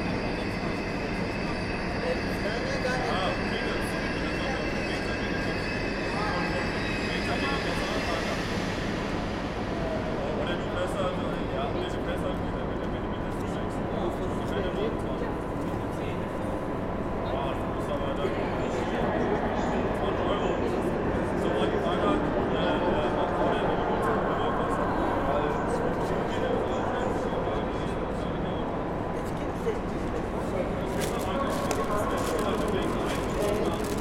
Frankfurt (Main) Hauptbahnhof, Gleiszugang - 27. März 2020 Gleiszugang
The station is not very busy. Some workers of Deutsche Bahn are discussing stuff, there seems not much to do. People pass by, a beggar is asking for money, trains are arriving and leaving. The pigeons are still there and people - but only once - run to catch a train. But again it is quite quiet.
Hessen, Deutschland